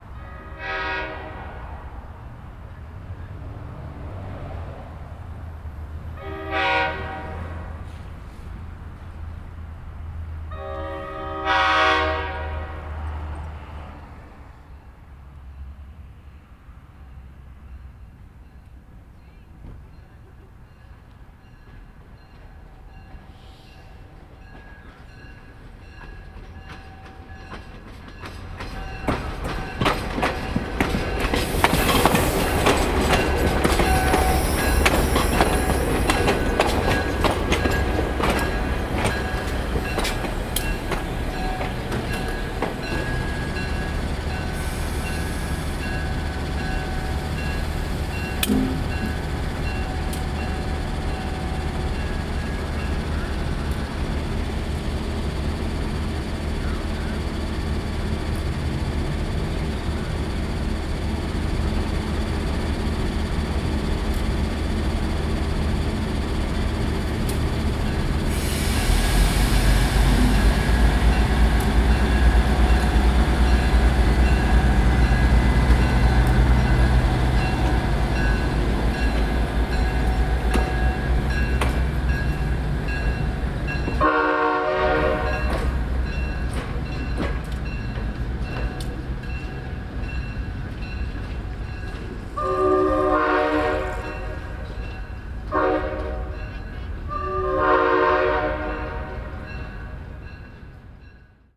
Duncan, B.C. - VIA Rail Train, Duncan station
VIA passenger train stops in Duncan on its way from Courtenay to Victoria. Binaural recording.
17 January 2010, ~22:00